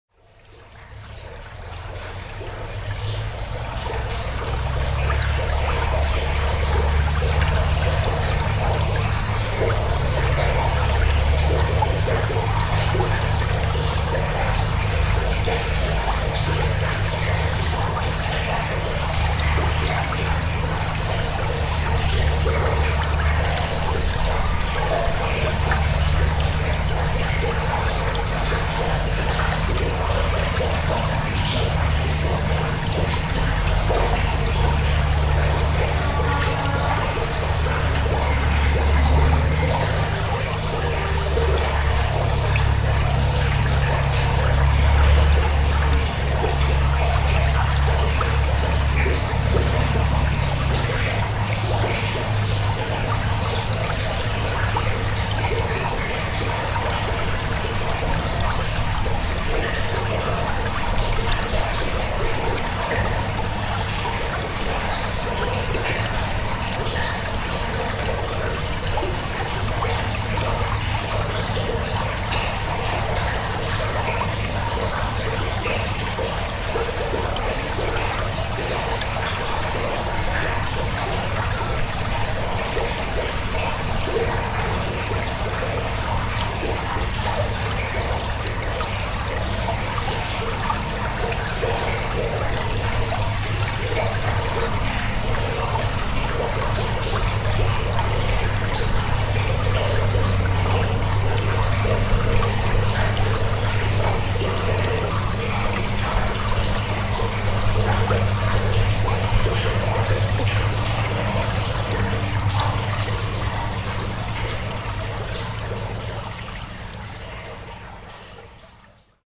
The Nil river in a tunnel below the street, and at the back, a cropper, active in the fields.